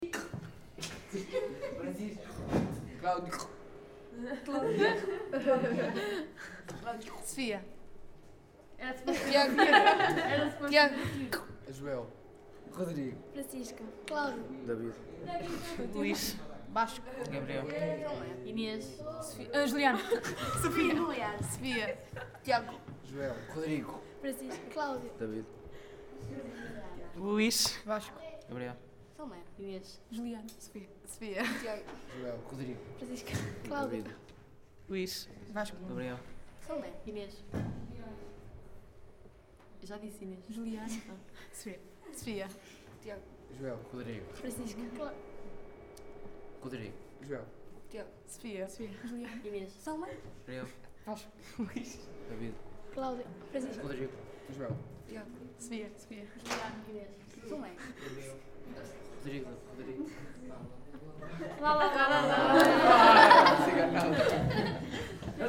Av. Salgueiro Maia, Melgaço, Portugal - ATLAS I Melgaço
CASA DA CULTURA - ATLAS I Melgaço
som 1
Comédias do Minho - organização